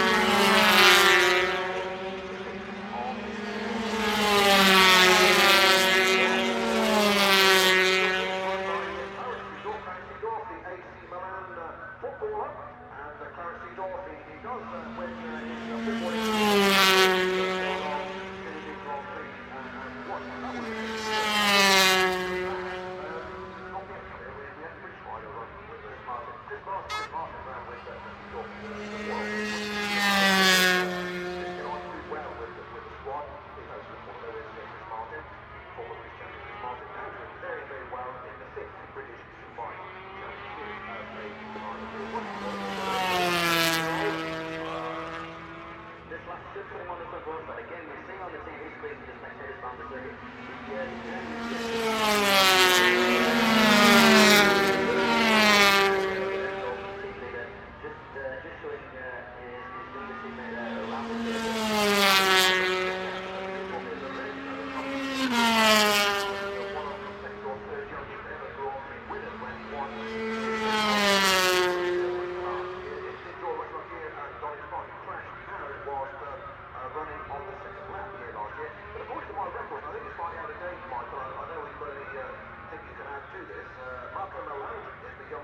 Unnamed Road, Derby, UK - British Motorcycle Grand Prix 2004 ... 125 ...
British Motorcycle Grand Prix 2004 ... 125 qualifying ... one point stereo mic to mini-disk ...
July 2002